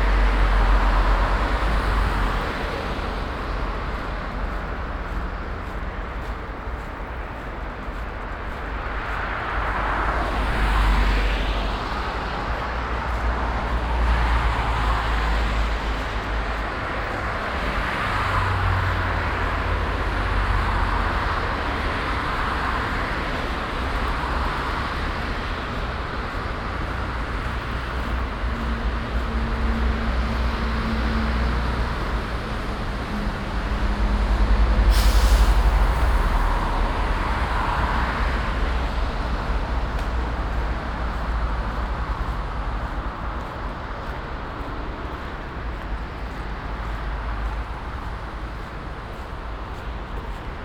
Grasweg, Kiel, Deutschland - Binaural soundwalk Kiel, Germany
Binaural soundwalk in Kiel, Germany, 2021-04-06, pushing my bicycle from Grasweg to Gutenbergstraße, turned right to Eckernförder Straße, turned right following Eckernförder Straße for 1.7 km ending in an underground parking. Mostly traffic noise, @05:30 a very short and light hailstorm, occasionally slight wind rumble (despite wind protection), pedestrians and cyclists, birds (gull, black bird). Zoom H6 recorder, OKM II Klassik microphone with A3 power adapter and wind shield earmuffs.
Schleswig-Holstein, Deutschland, 6 April 2021